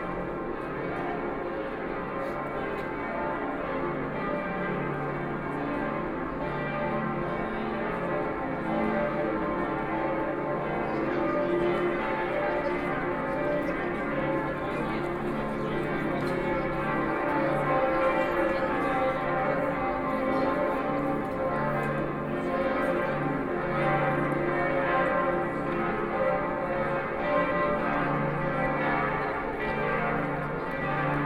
Marienplatz, Munich 德國 - Bells
Walking around the square, Church bells, A lot of tourists, Footsteps